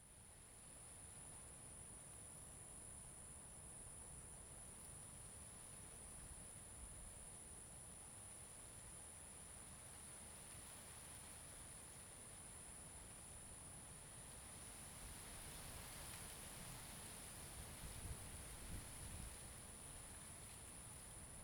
青青草原, Koto island - Prairie sea
Prairie sea, Sound of the waves, Environmental sounds
Zoom H2n MS +XY
Taitung County, Taiwan, 29 October